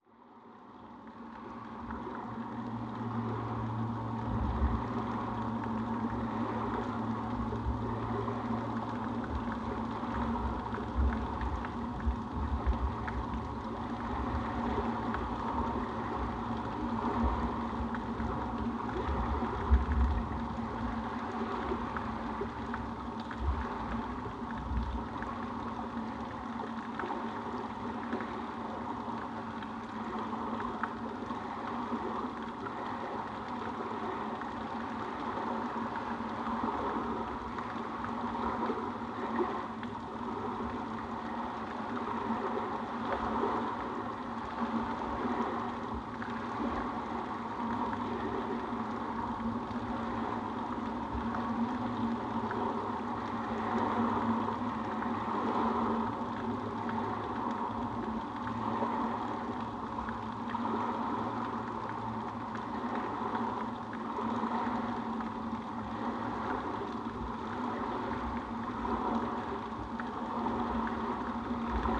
Rostrevor, UK - Contact Mics on Drainage Pipe to the Lough
Recorded with a pair of JrF contact mics and a Marantz PMD 661